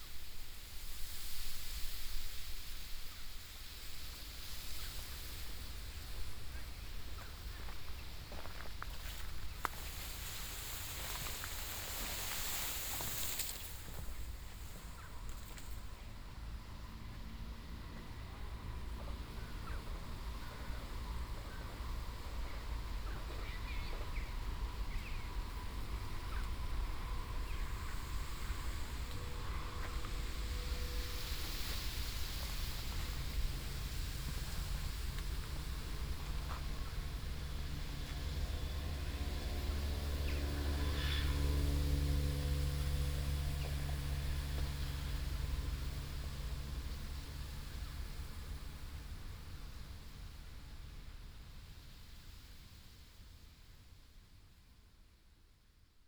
ruisend riet, trein op de achtergrond
rustling sheer, train in background
Leiden, The Netherlands, 7 September